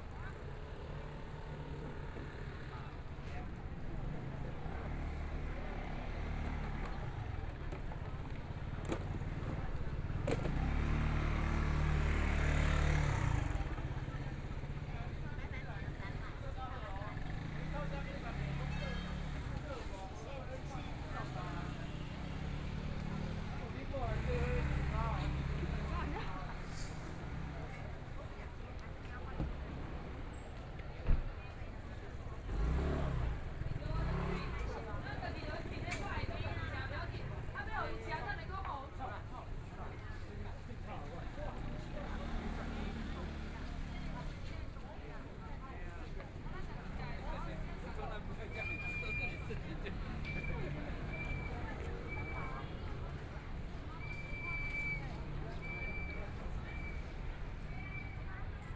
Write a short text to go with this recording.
Walking through the market, Traffic Sound, Binaural recordings, Zoom H4n+ Soundman OKM II